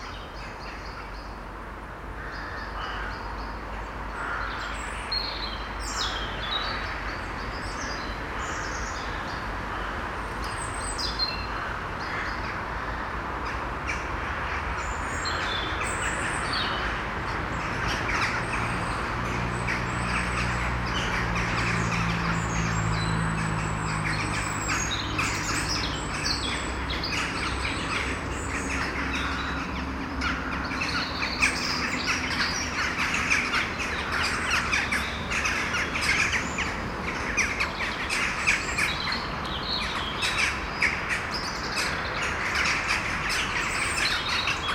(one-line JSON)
{"title": "Maintenon, France - Crows war", "date": "2016-12-24 17:30:00", "description": "In this private wood, nobody is going and crows are living. Every evening, they talk about their day, it makes very noisy screams you can hear every winter early on the evening (something like 5 PM). I put a microphone in the forest and went alone in the kindergarden just near. At the beginning of the recording, a blackbird sing very near the recorder. A plane is passing by and after, the crows make war, as every evening. This is christmas and there's a lot of cars driving the small street called rue Thiers.", "latitude": "48.59", "longitude": "1.58", "altitude": "110", "timezone": "GMT+1"}